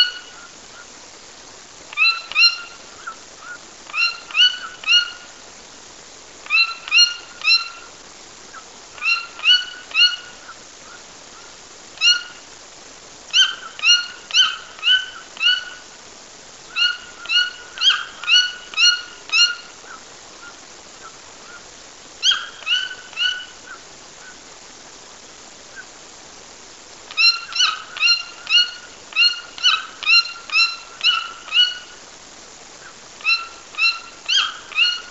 Hato Corozal, Casanare, Colombia - Tucan
17 April, 08:00